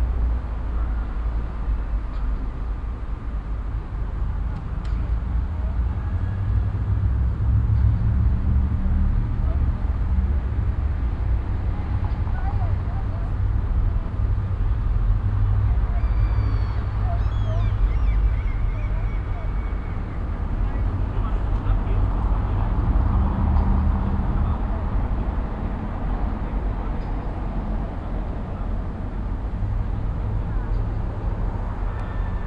Quiet Sunday around noon at the town hall square with 2 PM chimes of the town hall clock. A few people around talking, a little traffic in a distance some gulls. Sony PCM-A10 recorder with Soundman OKM II Klassik microphone and furry windjammer.
Schleswig-Holstein, Deutschland, 30 May 2021